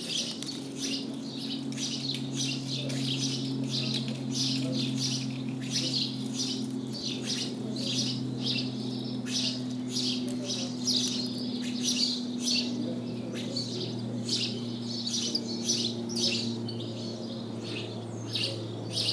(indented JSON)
{
  "title": "Sainte-Marie-de-Ré, France - Sparrows",
  "date": "2018-05-22 20:10:00",
  "description": "In the small center of Sainte-Marie-de-Ré, sparrows are singing and trying to seduce. The street is completely overwhelmed by their presence.",
  "latitude": "46.15",
  "longitude": "-1.31",
  "altitude": "10",
  "timezone": "Europe/Paris"
}